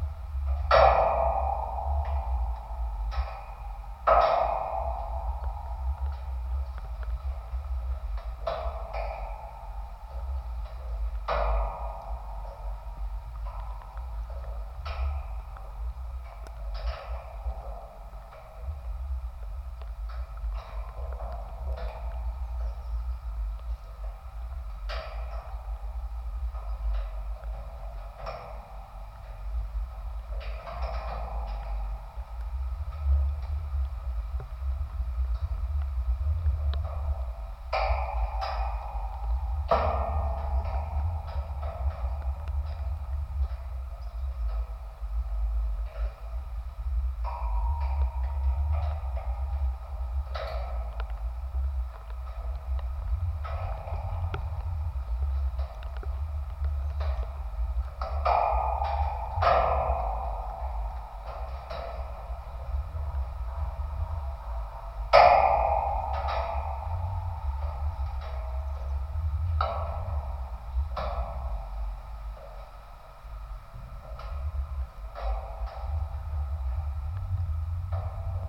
Utena, Lithuania, metallic football gates
light rain. contact microphones placed on metallic football gates on kids playground
2 July, ~17:00